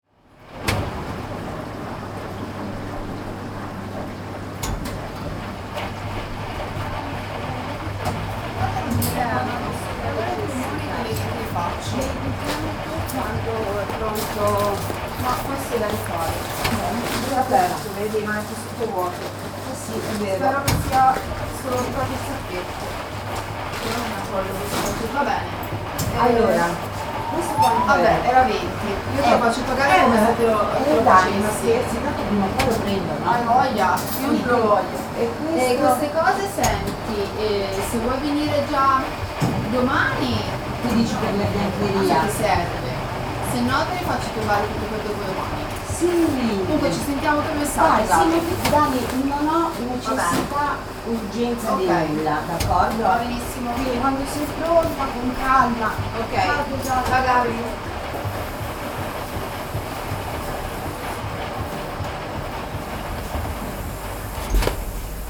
Il suono circolare e idraulico-meccanico della lavanderia a gettone di via Palestro.
Massa MS, Italy